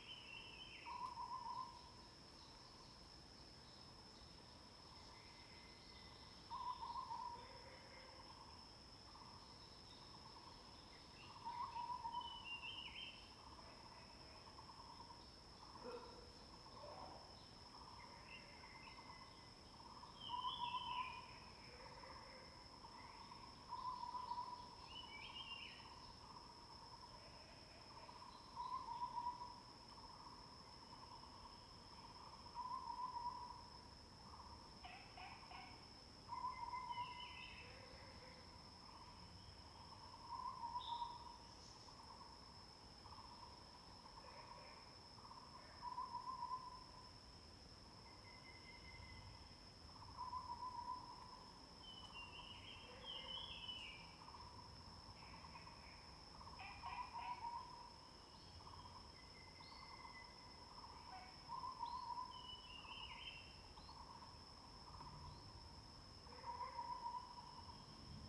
Hualong Ln., Yuchi Township 魚池鄉 - Bird and Frog sounds
Bird sounds, Frog sounds
Zoom H2n MS+XY
Nantou County, Yuchi Township, 華龍巷43號, 4 May